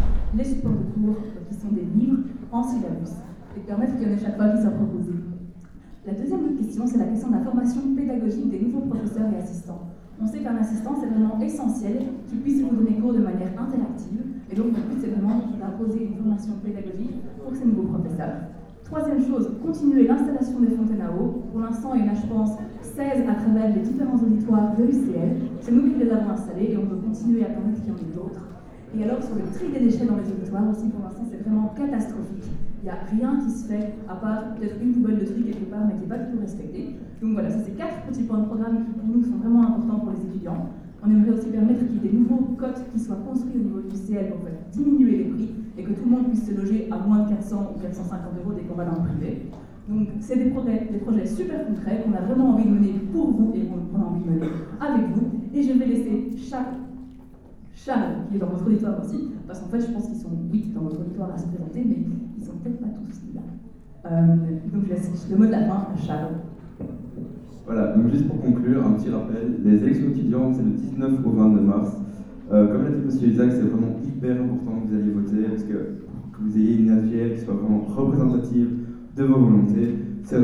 Centre, Ottignies-Louvain-la-Neuve, Belgique - Cactus Awakens
Students trade union presents their programm with a view to the future elections.
Ottignies-Louvain-la-Neuve, Belgium, 11 March 2016, ~14:00